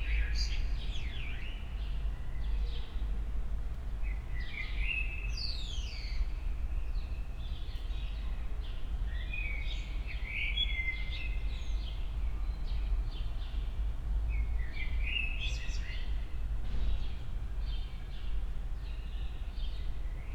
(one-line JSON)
{"title": "Berlin Bürknerstr., backyard window - Sunday afternoon ambience", "date": "2014-04-06 17:05:00", "description": "quite Sunday afternoon evening in spring\n(Sony D50, Primo EM172)", "latitude": "52.49", "longitude": "13.42", "altitude": "45", "timezone": "Europe/Berlin"}